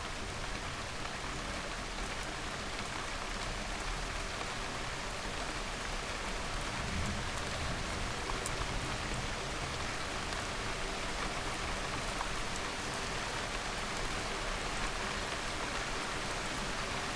Recorded from an attic room in a terraced house using LOM microphones